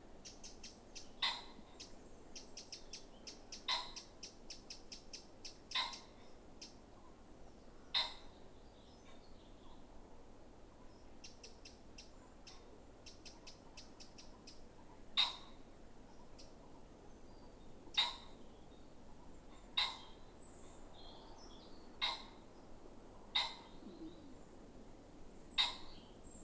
Benningham Green Common, UK - pheasant song